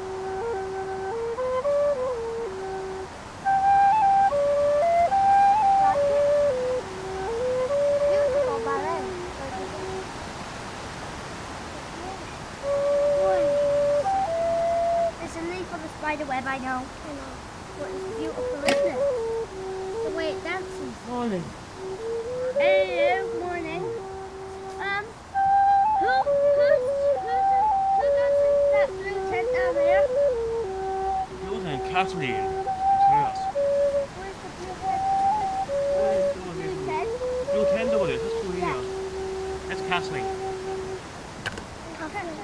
Near the Octagon, Glen of the Downs, Co. Wicklow, Ireland - Fireside Music
This is a recording made with the trusty EDIROL R09 sitting at the fireside in the morning at the camp by the Octagon, playing music with Jeff's recorders, accompanied by two budding musicians, Hawkeye and Bea. Bea is on percussion, Hawkeye is on recorder. The wind sings with us and you can hear other comrades from the camp speaking as we sit in the smoke, listening and sounding together.
29 July 2017